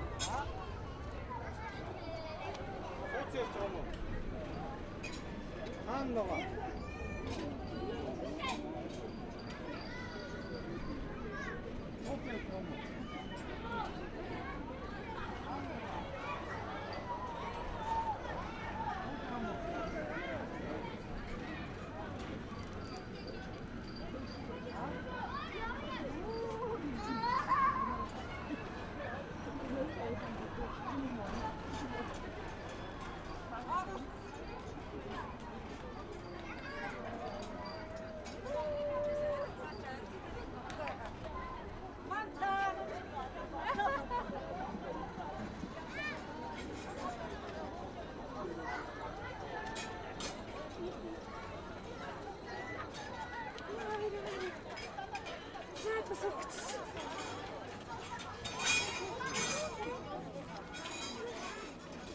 {"title": "Khoroo, Ulaanbaatar, Mongolei - chain carousel", "date": "2013-06-01 15:22:00", "description": "nothing to add, they take place it starts, stops, the children go away - recorded in stereo with a sony microphone", "latitude": "47.91", "longitude": "106.92", "altitude": "1293", "timezone": "Asia/Ulaanbaatar"}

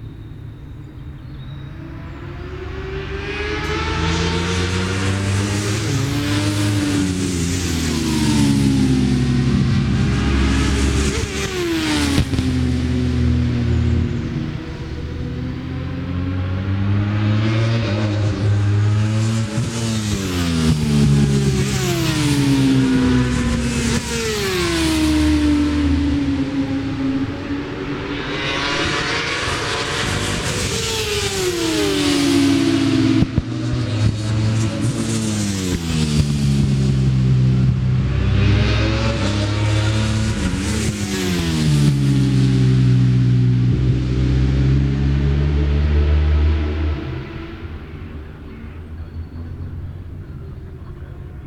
{"title": "Brands Hatch GP Circuit, West Kingsdown, Longfield, UK - british superbikes 2003 ... superbikes ...", "date": "2003-06-21 11:01:00", "description": "british superbikes 2003 ... superbikes free practice ... one point stereo mic to minidisk ...", "latitude": "51.35", "longitude": "0.26", "altitude": "151", "timezone": "Europe/London"}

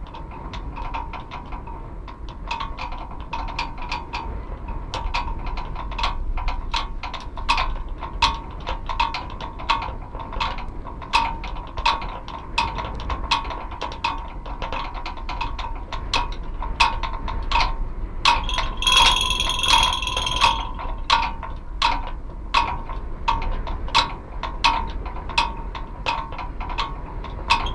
{"title": "Pilėnų g., Ringaudai, Lithuania - Street light pole Nr.46", "date": "2021-01-21 21:40:00", "description": "Composite 4 contact microphones recording of a street light pole with some loose wires tumbling polyrhythmically inside. During stronger gushes of wind, the pole is vibrating more and the wires inside begin screeching loudly.", "latitude": "54.88", "longitude": "23.81", "altitude": "80", "timezone": "Europe/Vilnius"}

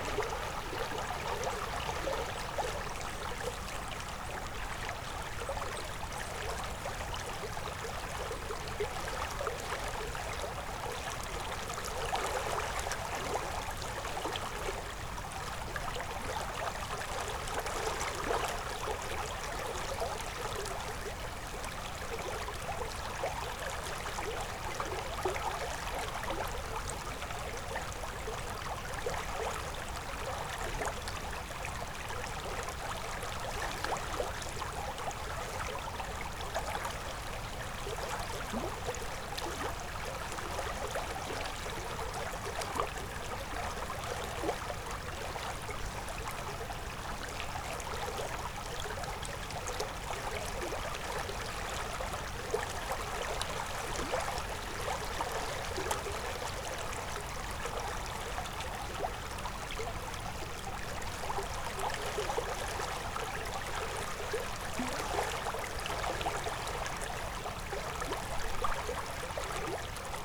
{"title": "Lithuania, Utena, river in oak wood", "date": "2010-10-27 14:28:00", "description": "sitting o the shore of small river in the oak wood", "latitude": "55.47", "longitude": "25.59", "altitude": "132", "timezone": "Europe/Vilnius"}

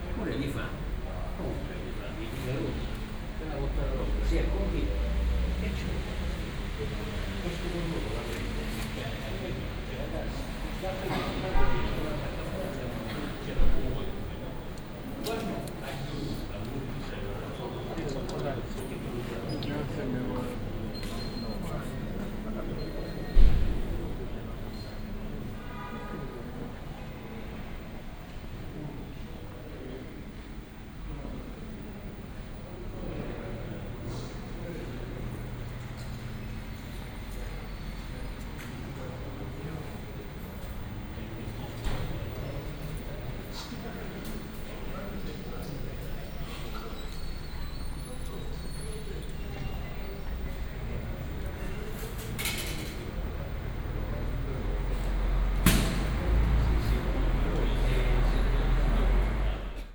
{"title": "messina centrale - entry hall ambience", "date": "2009-10-24 14:40:00", "description": "messina main station, entry hall ambience", "latitude": "38.19", "longitude": "15.56", "altitude": "12", "timezone": "Europe/Berlin"}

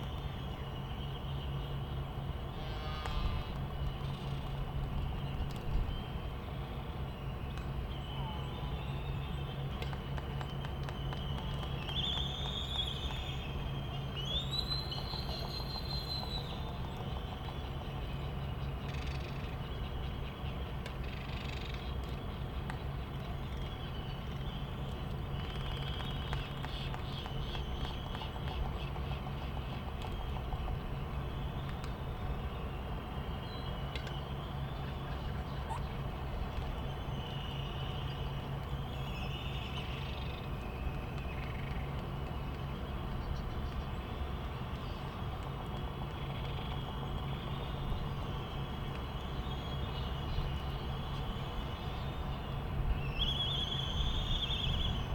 {"title": "United States Minor Outlying Islands - Laysan albatross soundscape ...", "date": "1997-12-25 16:30:00", "description": "Sand Island ... Midway Atoll ... soundscape ... laysan albatross ... white terns ... black noddy ... bonin petrels ... Sony ECM 959 one point stereo mic to Sony Minidisk ... background noise ...", "latitude": "28.22", "longitude": "-177.38", "altitude": "9", "timezone": "Pacific/Midway"}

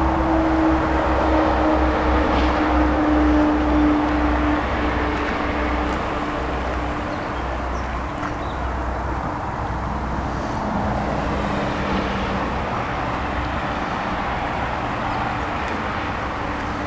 {"title": "Schwäbisch Gmünd, Deutschland - River and Bridge", "date": "2014-05-12 12:23:00", "description": "The sound of cars driving over a bridge that passes a river.", "latitude": "48.79", "longitude": "9.75", "altitude": "303", "timezone": "Europe/Berlin"}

